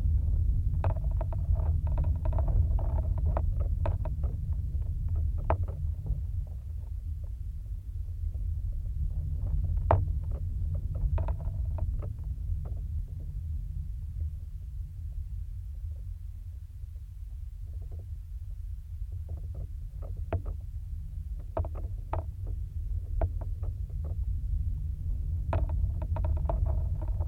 Bluff View Trail Access, Glencoe, Missouri, USA - Bluff Safety Fence
There is access no more to the Bluff View Trail at this point heading west. An orange safety fence blocks the trail. Recording of contact mic attached to plastic fence and geophone attached to rebar fence post.
Missouri, United States, 17 September 2022